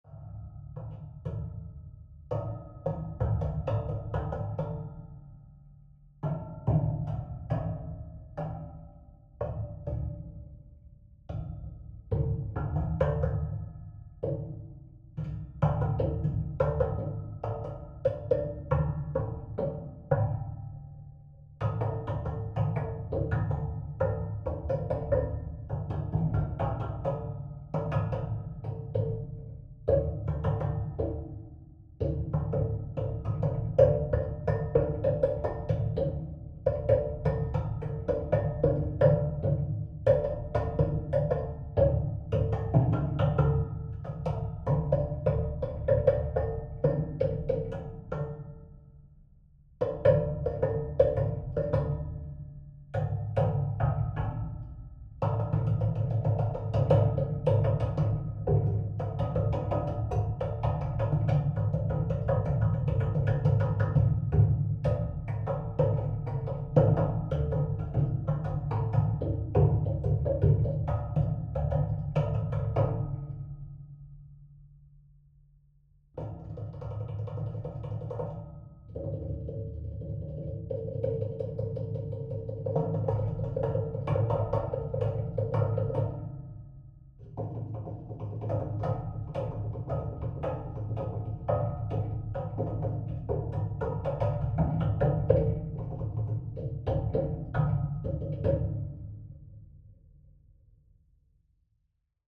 Playing the ice factory window grill, U Ledáren, Praha, Czechia - Sounding a metal window grill on the old ice factory
This beautiful old building is a former ice factory, part of the brewing industry that once dominated the Braník area of Prague. Small automobile companies have taken over some of the space, but mostly it is empty and abandonned. The brick work, although crumbling is still lovely and some of the sign and names can still be seen. The building itself makes no sound. The windows accesible from the ground have been bricked-up and are covered with welded metal grills, which can be quite tuneful when hit with ones hands. This track is short playing session recorded with contact mics, so nome of the background sound - constant heavy traffic - is audible.
9 April 2022, 16:13